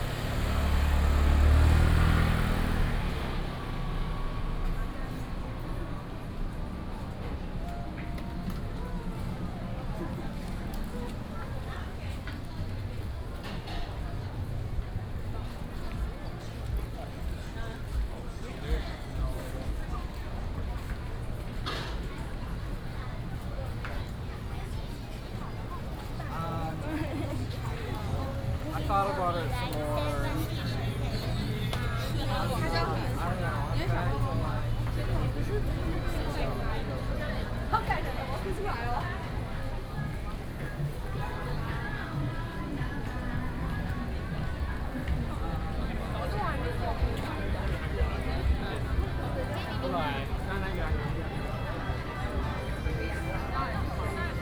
Longquan St., Da'an Dist. - walking in the Street
walking in the Street, Various shops
2 July, ~20:00